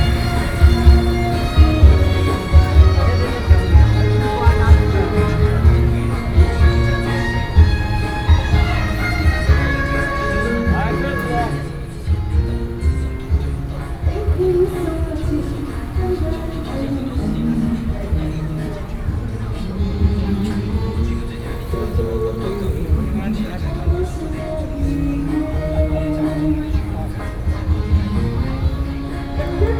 Lane, Sōngjiāng Rd, Taipei, Taiwan - In the temple